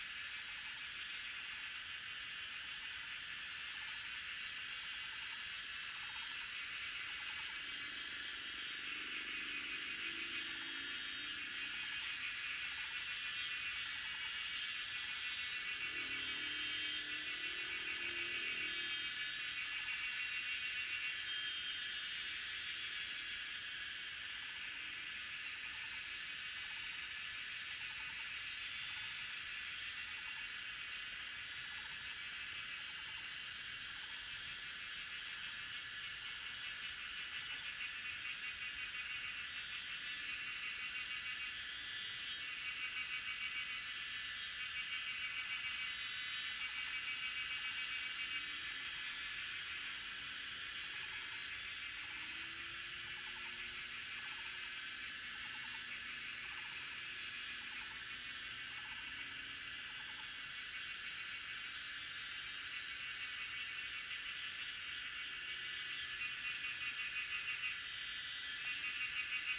水上巷桃米里, Puli Township - Cicada sounds and Dogs barking
Cicada sounds, Bird sounds, Dogs barking, in the woods
Zoom H2n MS+XY
Nantou County, Puli Township, 華龍巷164號